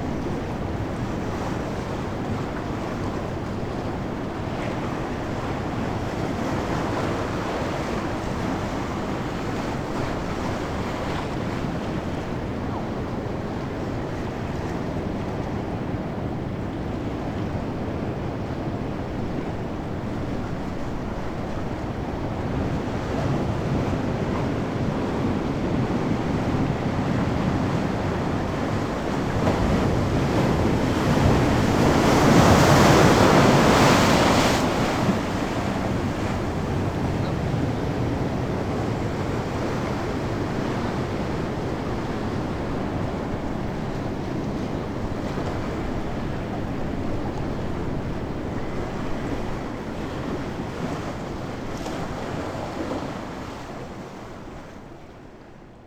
crashing waves
the city, the country & me: october 5, 2010
5 October 2010, 17:01